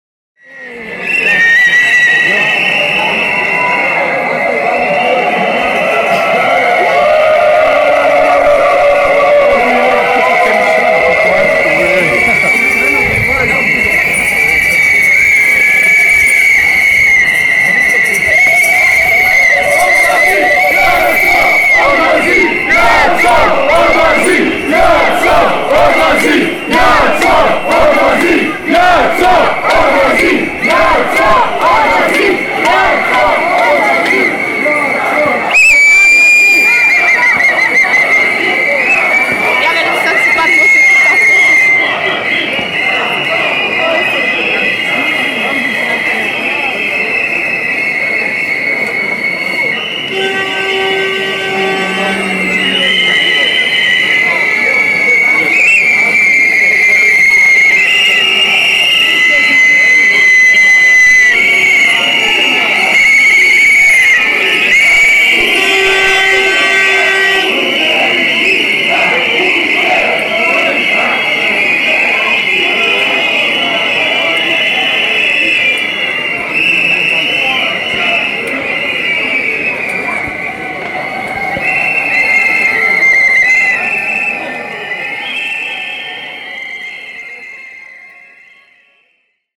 Protests in Zagreb, 3 March (2) - Indians
protesters prevented in reaching the government headquaters by a police cordon; Indian cries and demands for demission of prime minister Jadranka Kosor, calling her by nickname: Yazzo, leave!
3 March 2011, ~17:00